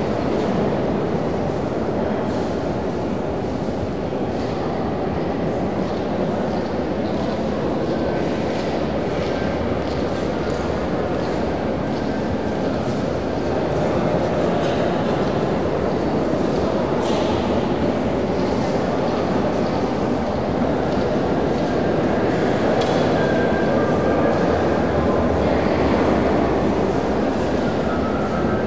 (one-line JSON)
{"title": "paris, musee du louvre, visitors", "date": "2009-12-12 13:34:00", "description": "in the museum, atmosphere of footsteps and voices of vistors in the grande galerie\ninternational cityscapes - topographic field recordings and social ambiences", "latitude": "48.86", "longitude": "2.34", "altitude": "46", "timezone": "Europe/Berlin"}